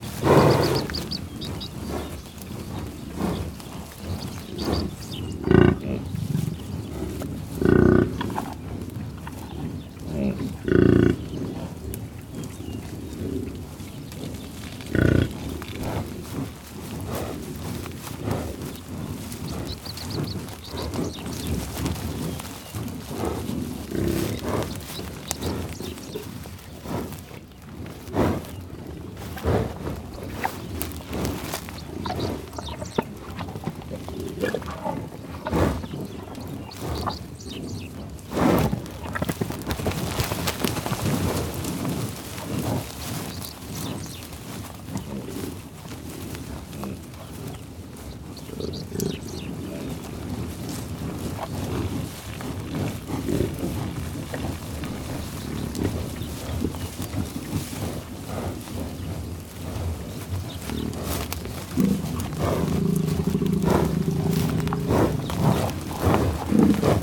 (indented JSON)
{
  "title": "Comté d'Osage, Oklahoma, États-Unis - Buffalos in the tall-grass prairie in Oklahoma, growling, grunting, sniffing and eating some food",
  "date": "2013-05-13 19:00:00",
  "description": "At the end of the day, the buffalos came to eat some food the worker of the park gave to them with his truck. The bisons came really close to us.\nSound recorded by a MS setup Schoeps CCM41+CCM8\nSound Devices 788T recorder with CL8\nMS is encoded in STEREO Left-Right\nrecorded in may 2013 in the Tallgrass Prairie Reserve close to Pawhuska, Oklahoma (USA).",
  "latitude": "36.65",
  "longitude": "-96.35",
  "altitude": "255",
  "timezone": "America/Chicago"
}